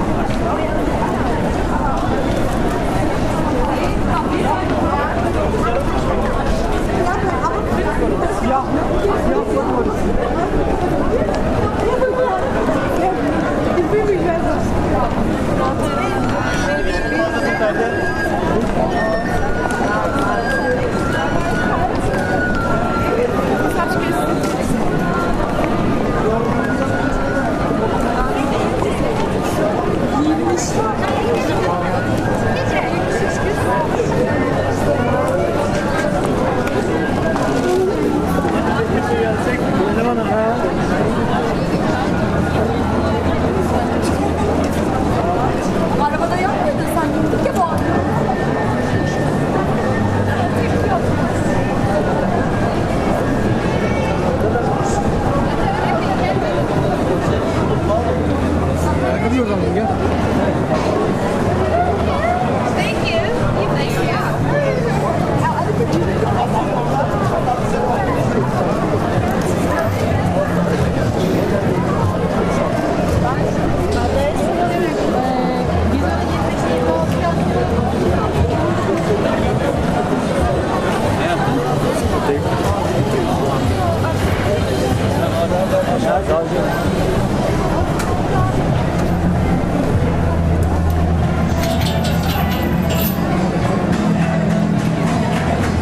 Istanbul, Istiklal caddesi at night
Istiklal Caddesi, the street of the many manias. Whatever you do expect, its there. If there is such a thing as the aesthetics of the crowds, it comes to a climax in this place during the night. The result is a sonic conundrum. The recording was made walking down the street for approximately 500 meters.
17 September